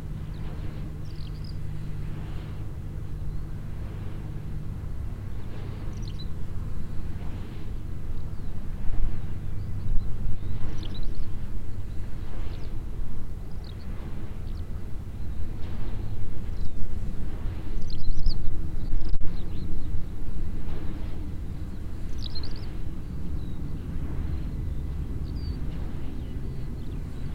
heiderscheid, wind power plant
Standing at the pole of the wind energy mill. A motor plane flying across the sky, birds chirping and the movement sound of the mills wings.
Heiderscheid, Windkraftwerk
Am Mast eines Windkraftwerkes. Ein Motorflugzeug fliegt am Himmel, Vögel zwitschern und das Geräusch der sich bewegenden Windrotoren.
Project - Klangraum Our - topographic field recordings, sound objects and social ambiences